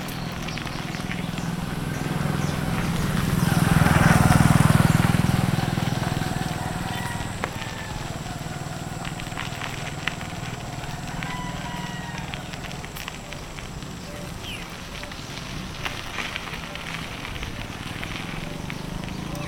San Sebastian De Buenavista-San Zenon, San Zenón, Magdalena, Colombia - rodando en bicicleta
Rodando en bicicleta por las calles en tierra de El Horno